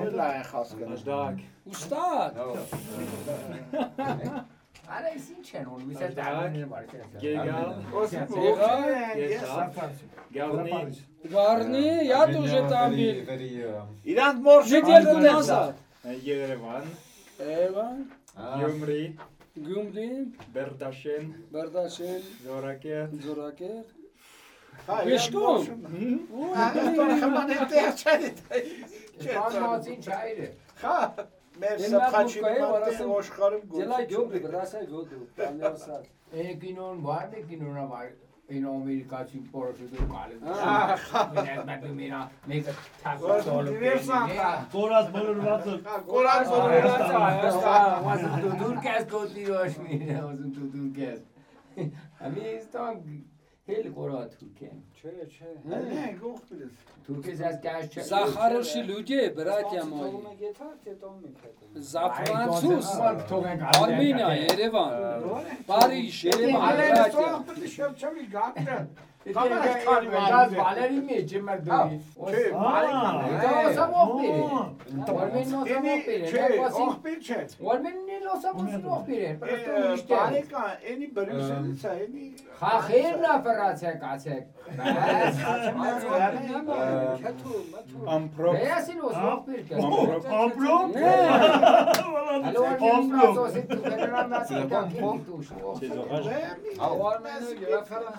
Vank, Arménie - To the monastery
After a terrible storm, some farmers took a car and went here, at the monastery. The old church is on the top of a volcano. They prayed during 10 minutes. Some other people are here. Nobody knows nobody, but everybody discuss. They opened a vodka bottle and give food each others.